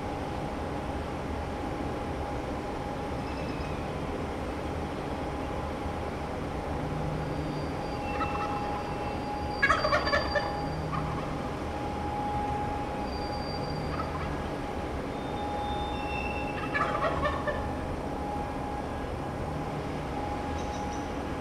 wild turkeys and construction noise, Headlands CA
early morning recording trying to capture the wild turkey call which was masked by some road surfacing process